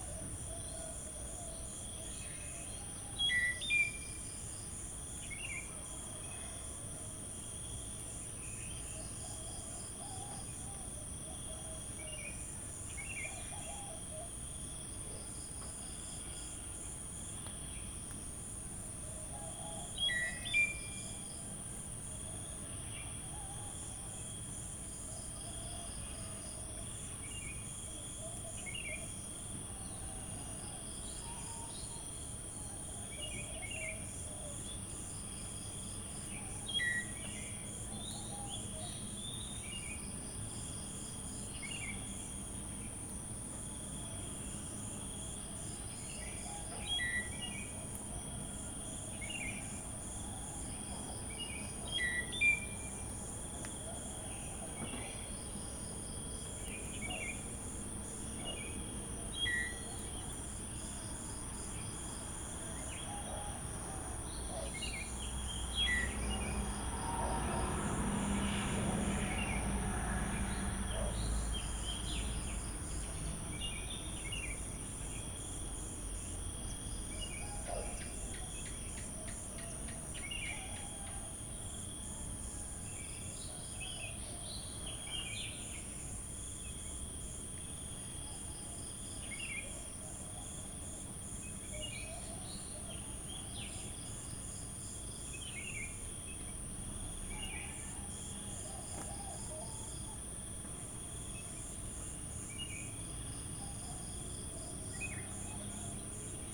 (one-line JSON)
{"title": "Unnamed Road, Tambon Wiang Tai, Amphoe Pai, Chang Wat Mae Hong Son, Thailand - Morgenatmo Pai", "date": "2017-08-26 06:15:00", "description": "Early morning atmosphere at a resort in Pai. Crickets, birds and all kinds of sizzling animals, but also some traffic going by in a distance. Slow and relaxing, though not out of the world.", "latitude": "19.35", "longitude": "98.43", "altitude": "556", "timezone": "Asia/Bangkok"}